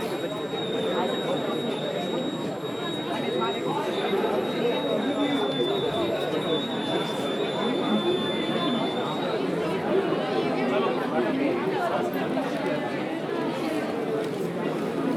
Unnamed Road, Pragati Maidan, New Delhi, Delhi, India - 14 World Book Fair
World Book Fair at Pragati Maidan/
Zoom H2n + Soundman OKM
5 February 2016, ~15:00